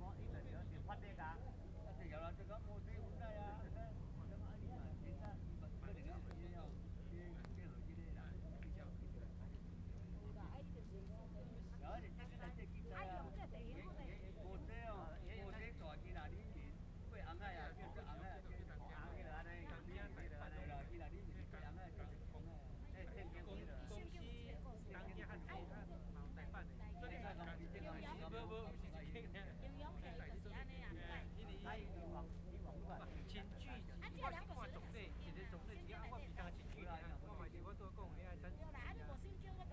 奎璧山地質公園, Penghu County - Tourists
At the beach, Tourists
Zoom H2n MS+XY